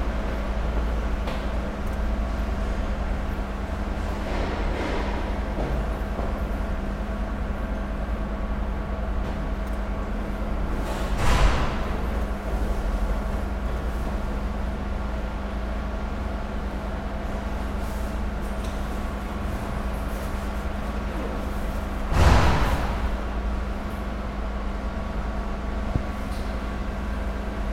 Place de Paris, Lyon, France - Couloir de parking SNCF Vaise
Dans les couloirs dune parking SNCF à Lyon Vaise (9e).